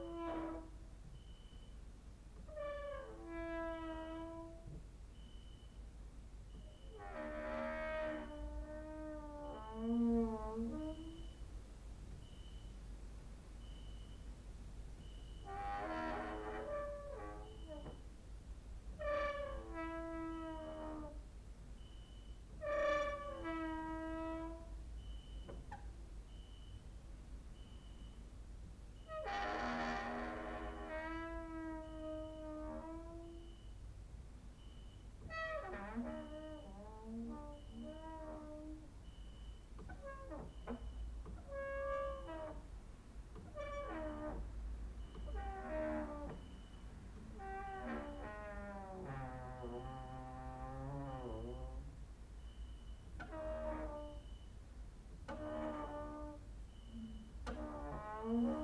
Mladinska, Maribor, Slovenia - late night creaky lullaby for cricket/10
cricket outside, exercising creaking with wooden doors inside
17 August 2012